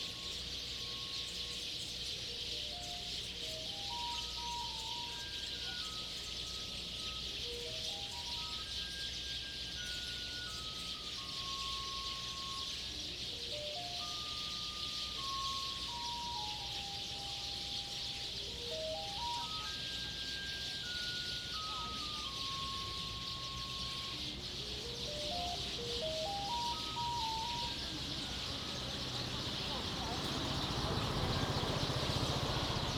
Birdsong, In the street, Small village, Traffic Sound
Zoom H2n MS +XY